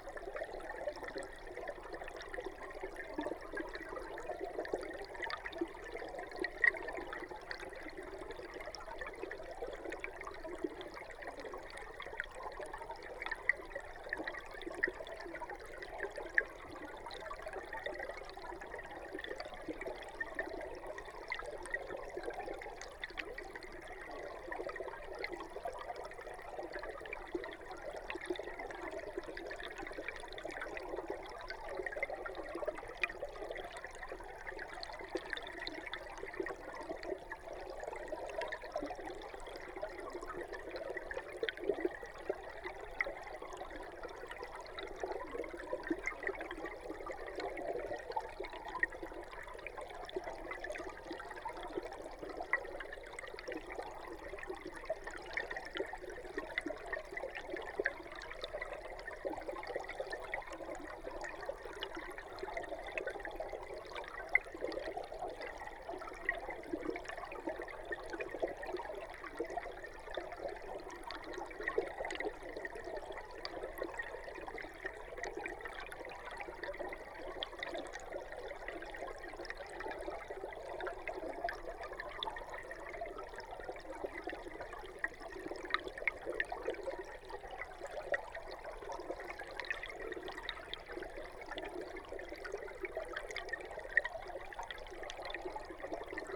Cape Farewell Hub The WaterShed, Sydling St Nicholas, Dorchester, UK - Sydling Water :: Below the Surface 3
The WaterShed - an ecologically designed, experimental station for climate-focused residencies and Cape Farewell's HQ in Dorset.
England, United Kingdom, 9 April 2022, 10:45